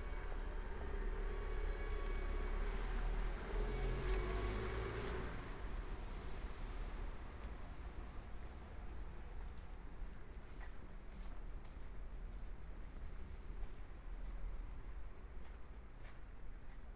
Brussels, Rue Dejoncker, demonstration
PCM-M10, SP-TFB-2, binaural.
13 February 2012, 09:23